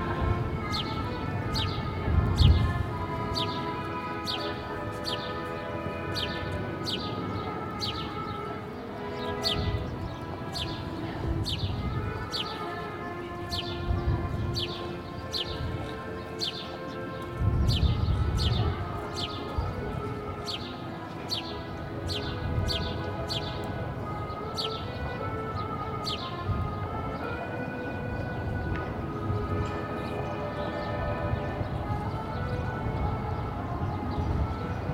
Reuterstrasse: Balcony Recordings of Public Actions - Midday Busker
I heard a busker on the street, five floors down from my balcony, playing the accordion.
Only when he changed to the other side of the street, I could also see him.
He would usually play for people sitting in front of cafés and restaurants. The same tune every day, as soon as it gets warm. But now... in the empty street of Corona pandemic times, he wandered around, until someone in the house on the opposite side, from his balcony, threw some money inside a bag down to the street for him to take. Then he continued his walk.
Recorded on Sony PCM D100
Deutschland